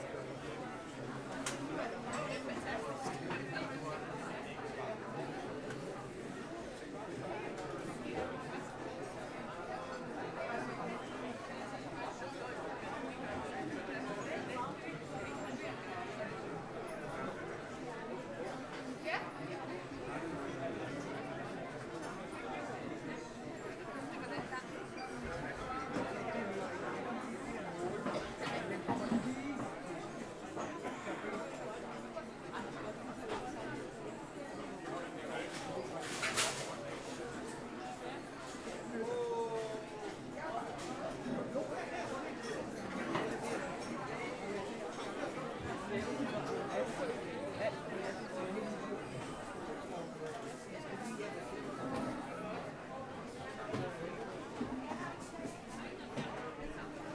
Havremarken, Farum, Denmark - Indoor flea market
Indoor flea market at rush hour. Constant voice background noise. Close tapping sounds from people searching
Brocante en intérieur, très frequentée. Sons de voix continue. Bruit de personnes fouillant à proximité